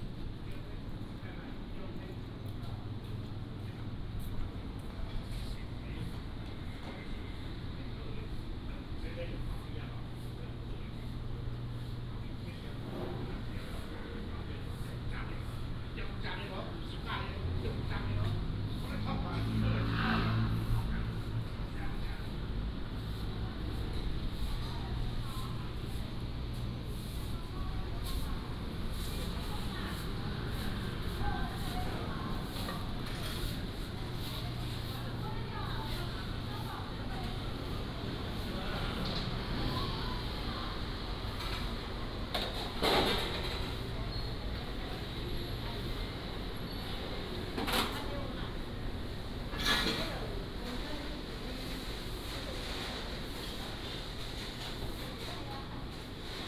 灣裡市場, Tainan City - market
market, Is preparing for rest